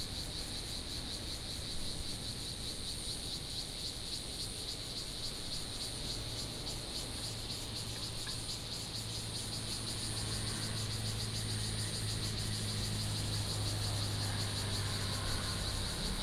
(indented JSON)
{
  "title": "金樽, Donghe Township - On the coast",
  "date": "2014-09-08 08:41:00",
  "description": "Cicadas sound, Traffic Sound, Sound of the waves, Tourists Recreation Area, The weather is very hot",
  "latitude": "22.95",
  "longitude": "121.28",
  "altitude": "58",
  "timezone": "Asia/Taipei"
}